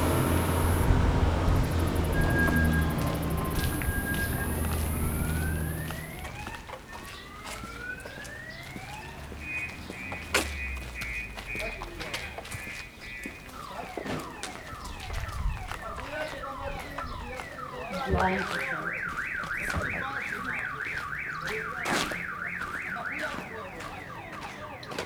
Lenina St., Bahkchsysaray, Crimea, Ukraine - Streetlife. until the muezzin calls.
Walking along the mainstreet around midday with my friend and the zoom-recorder. There is traffic, there is the rinse, we pass the famous Hun-palace on Lenina street, tourists check out the 5 stall-market, kids play the birdwhistle, a barbecue-kafe does a soundcheck next to a busy bus stop, pushkin and the street dogs greet from a memorial, one out of a 100 russian flags dance in the mild wind, a single aeroplane passes the sanctioned sky until the muezzin of the mosque starts one of his last calls before the evening celebrations of Orazabayram.
КФО, Україна